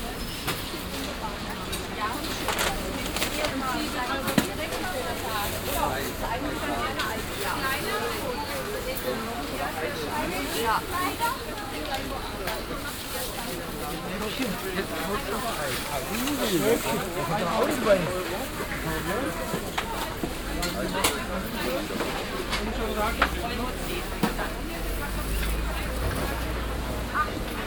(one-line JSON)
{"title": "stuttgart, marktplatz, market", "date": "2010-06-19 18:39:00", "description": "weekly market on the central market place - listen to the german schwäbisch accent\nsoundmap d - social ambiences and topographic field recordings", "latitude": "48.78", "longitude": "9.18", "altitude": "250", "timezone": "Europe/Berlin"}